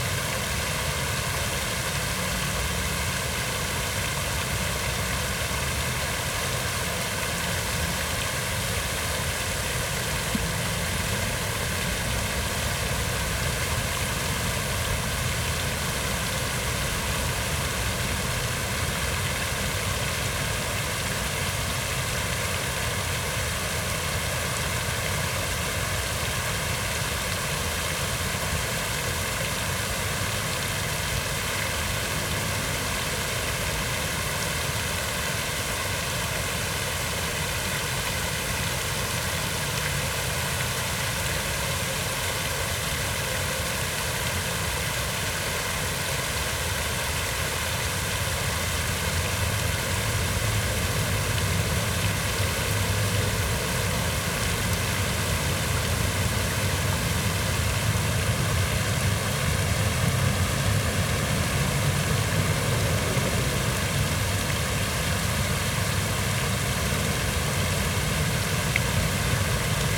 新板都會公園, Banqiao Dist., New Taipei City - Fountain
Fountain, Traffic Sound
Zoom H4n +Rode NT4
Banqiao District, New Taipei City, Taiwan, 29 November